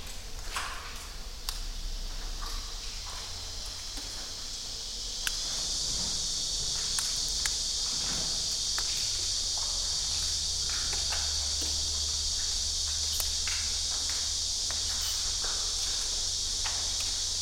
Kimyoung Lava Cave - Kimyoung Lava Cave and Manjanggul Lava Cave
Jeju Island has a series of remarkable lava tube caves. Manjanggul Cave is open to the public and people enjoy the fascinating resonance of the space by vocalising while exploring the tunnels extent. Nearby Kimyoung Cave is not open to the public...and proved a great place to enjoy the rich sonic textures of the underworld.